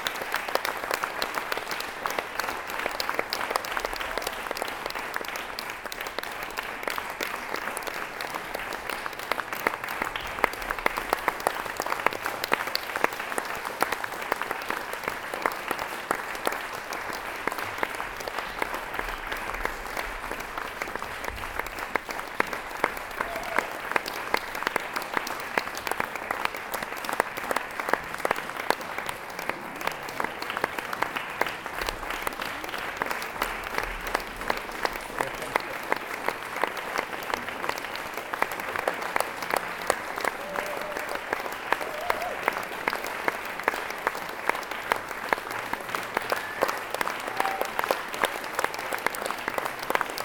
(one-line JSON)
{"title": "bonn, frongasse, theaterimballsaal, bühnenmusik killer loop - bonn, frongasse, theaterimballsaal, schlussapplaus", "description": "soundmap nrw - social ambiences - sound in public spaces - in & outdoor nearfield recordings", "latitude": "50.73", "longitude": "7.07", "altitude": "68", "timezone": "GMT+1"}